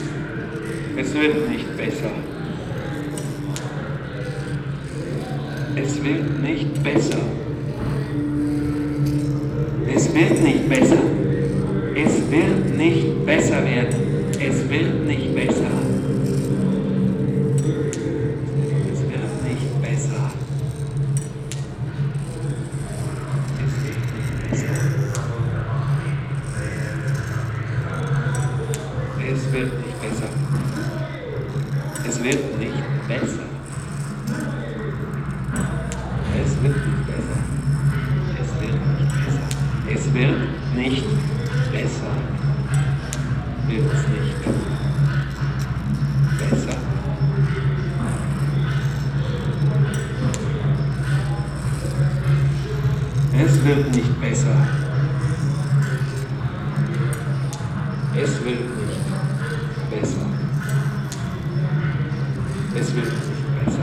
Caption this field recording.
Inside the museum in the basement area - during an exhibition of sound machines by artist Andreas Fischer. Here a machine on a long pole, with a rifle, a speaker horn, and a mechanism that moves the bowls of a mala. In the backgound the sound of other machines and visitors. soundmap nrw - social ambiences, topographic field recordings and art places